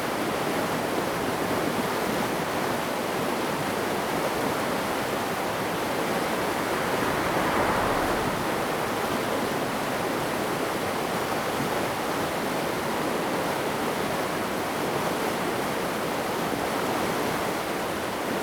福興村, Ji'an Township - waterways
Streams of sound, Very Hot weather, Farmland irrigation waterways
Zoom H2n MS+XY
Ji-an Township, 花23鄉道, August 2014